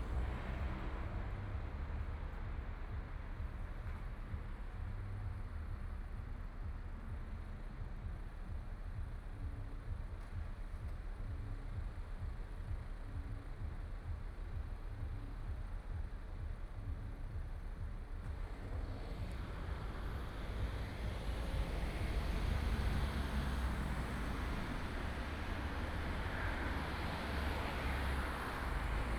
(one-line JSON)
{"title": "Schwanthalerstraße, 慕尼黑 Germany - walking in the Street", "date": "2014-05-11 00:22:00", "description": "walking in the Street.traffic sound", "latitude": "48.14", "longitude": "11.55", "altitude": "525", "timezone": "Europe/Berlin"}